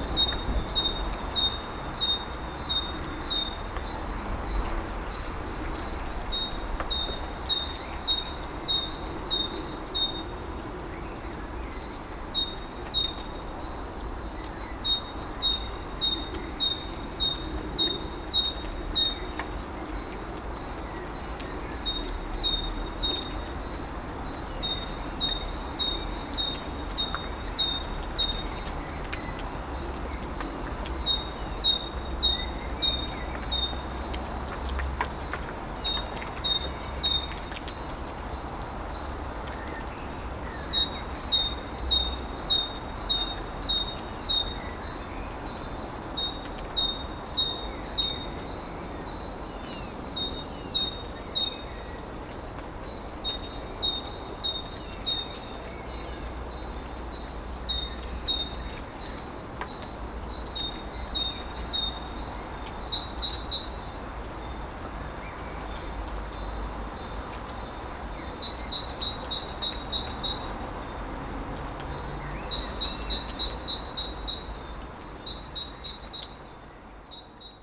Court-St.-Étienne, Belgique - La cohue
Early on the morning, it's raining a little bit, the day is awakening slowly and birds are singing.